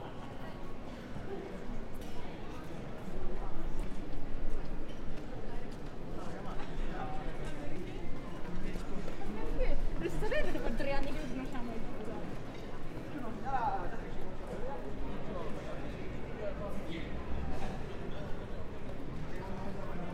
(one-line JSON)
{"title": "Perugia, Italy - open restorant in front of umbria hotel", "date": "2014-05-23 13:25:00", "latitude": "43.11", "longitude": "12.39", "altitude": "480", "timezone": "Europe/Rome"}